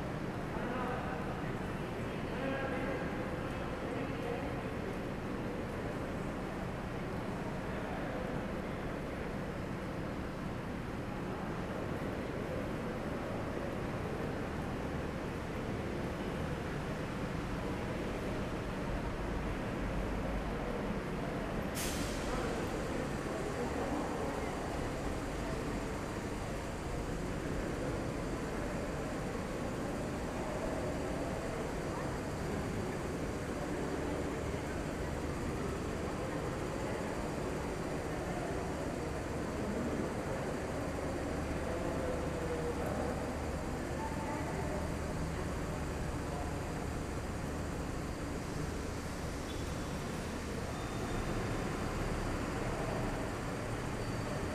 C., Centro, Mérida, Yuc., Mexique - Merida - espace sonore
Merida - Mexique
Un espace sonore empli de quiétude à l'intérieur du "Passage de la Révolution"